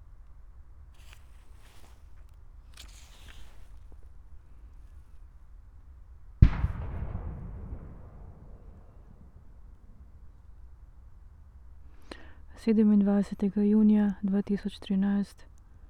variation from trieste notebook ... fragment from one hour reading performance Secret listening to Eurydice 11